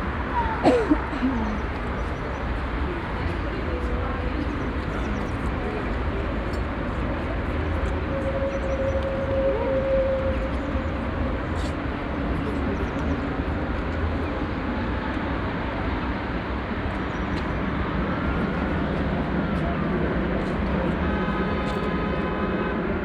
Cetatuia Park, Cluj-Napoca, Rumänien - Cluj, hillside monument view
On a hill nearby a socialistic monument viewing the city. The noise scape of the downhill city and some visitors talking.
Soundmap Fortess Hill/ Cetatuia - topographic field recordings, sound art installations and social ambiences
17 November 2012, 16:40